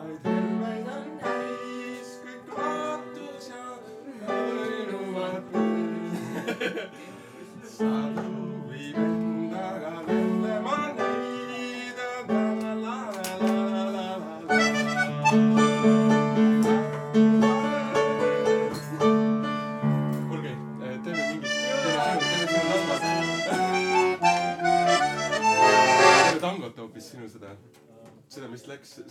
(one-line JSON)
{"title": "Tallinn, Koidu", "date": "2011-04-21 22:10:00", "description": "musicians improvising after film screening", "latitude": "59.42", "longitude": "24.73", "altitude": "19", "timezone": "Europe/Tallinn"}